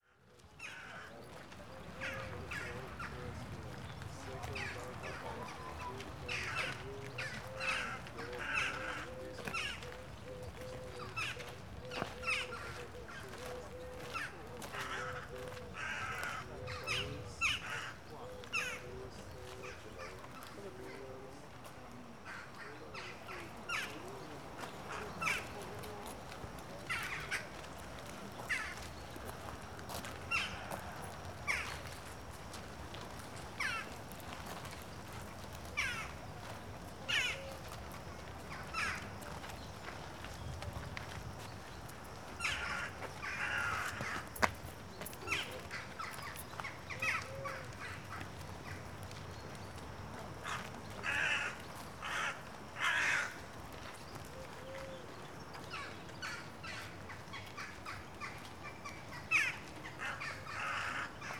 Knossos Ruins, Crete - crows
crows and other birds chatting in the trees, visitors approaching